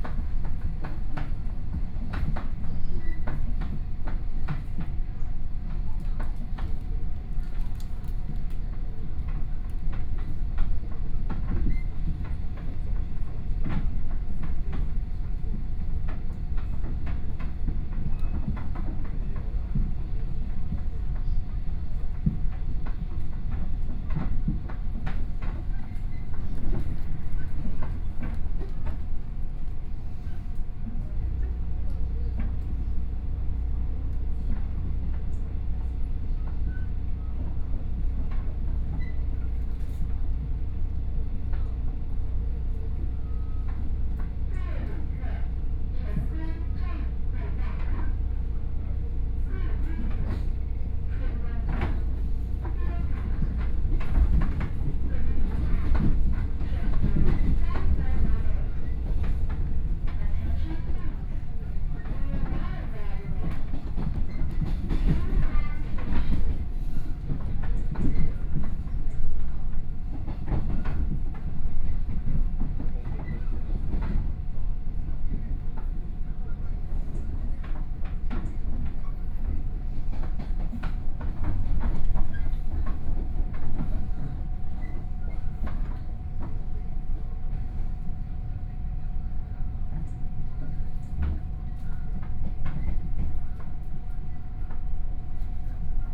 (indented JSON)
{
  "title": "Wuri District, Taichung - Local Train",
  "date": "2013-10-08 11:50:00",
  "description": "from Wuri Station to Chenggong Station, Binaural recordings, Zoom H4n+ Soundman OKM II",
  "latitude": "24.11",
  "longitude": "120.60",
  "altitude": "32",
  "timezone": "Asia/Taipei"
}